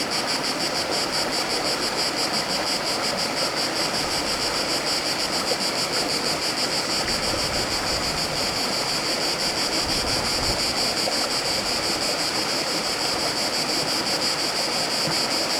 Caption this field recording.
Fiume Sosio e cicalìo in un pomeriggio d'Estate